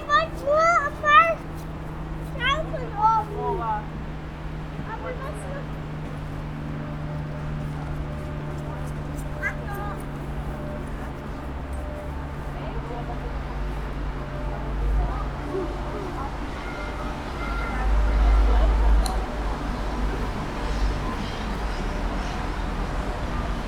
Innstraße, Innsbruck, Österreich - girls talk
girl playing with friendship